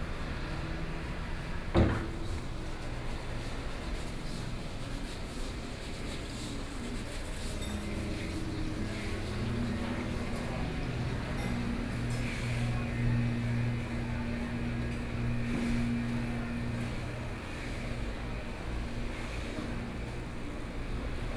鹽埕區中山里, Kaohsiung City - Walking through the market
Walking through the market